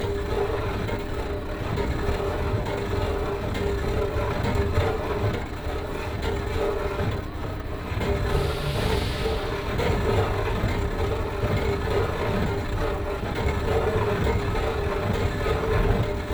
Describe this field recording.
this escalator at Victoria metro station doesn't sound very healthy. (Sony PCM D50, OKM2)